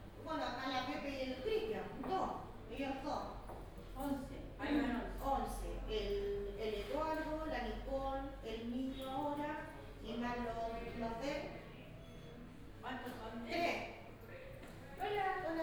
Reina Victoria, one of the many elevator in Valparaiso, elevator ride downwards, station ambience
(Sony PCM D50, OKM2)
Región de Valparaíso, Chile, November 2015